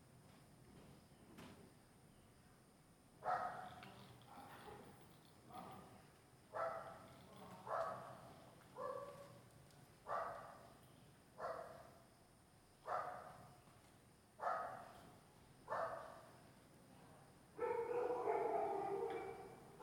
參贊堂, Puli, Taiwan - Bats sound
Bats sound。
Zoon H2n (XY+MZ), CHEN, SHENG-WEN, 陳聖文
24 October 2015, 17:30